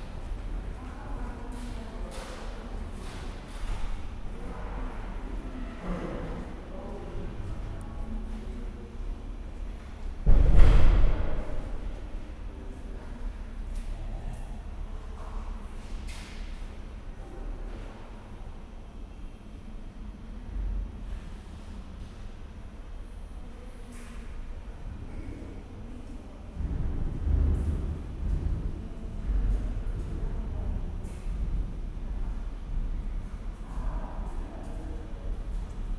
Diesterwegstraße, Berlin, Germany - Bezirksambt Pankow: waiting to register my apartment
Waiting to register one's address in the local council offices can take a while. Here you sit on worn metal chairs in a very long, spartan corridor with a ceiling so high binoculars are needed to see it properly. People walk or shuffle up and down, doors open and close mysteriously with a thump. The sound reverberates into the building's depth. You are hushed by the atmosphere. Time passes slowly. Hope arrives as a loud, but friendly, electronic 'ding dong' that announces the next appointment number displayed in red on a bright white screen high above. My moment is here. Everything goes very smoothly. I am now officially in Berlin with a bang up to date registration. Something I should have done 8 years ago.